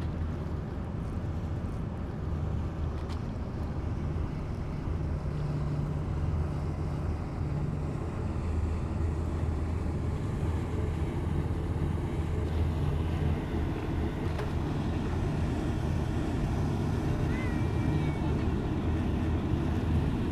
Waves of the Seine, tourist cruisers and city ambient around Louvre.
recorded with Soundman OKM + Sony D100
sound posted by Katarzyna Trzeciak
Île-de-France, France métropolitaine, France